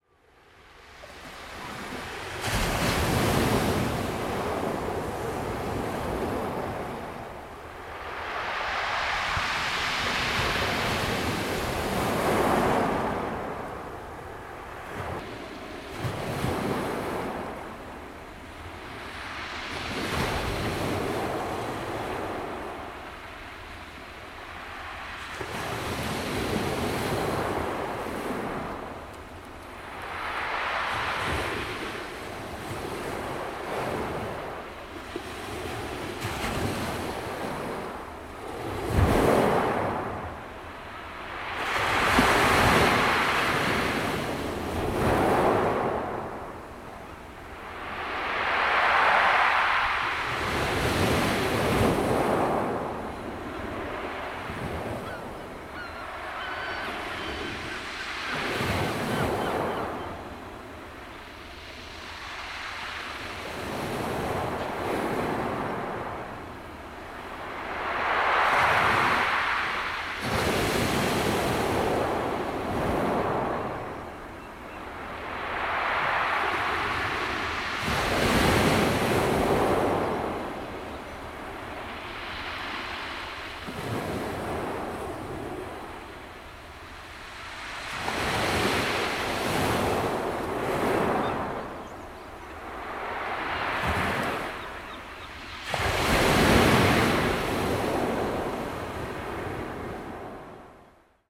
coastal waves at Etretat Normandy France
France